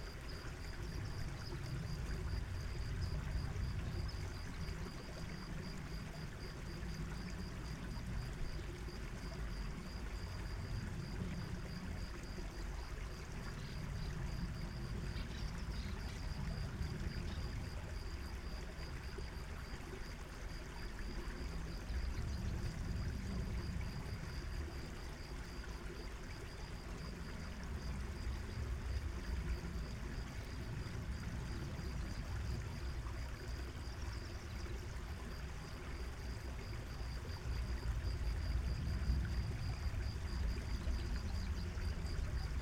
Late afternoon near a creek at a relatively remote small park in the Los Padres National Forest called Frenchman's Flat. Lots of crickets and birds and a few people enjoying the afternoon warmth.